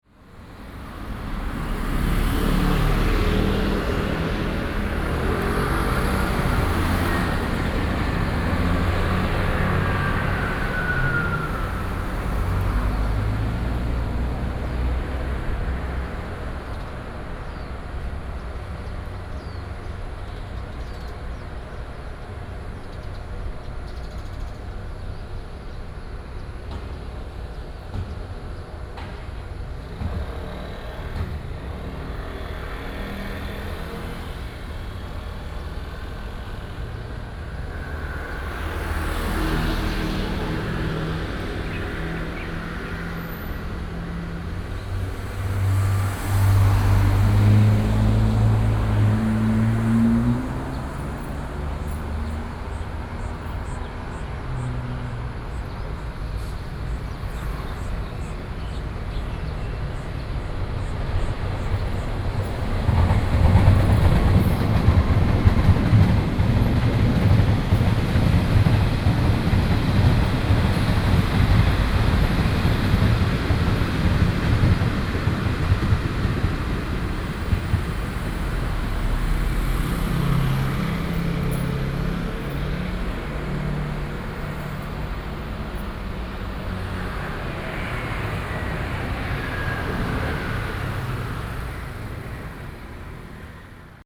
Traffic Sound, Traveling by train
Binaural recordings
Sony PCM D50 + Soundman OKM II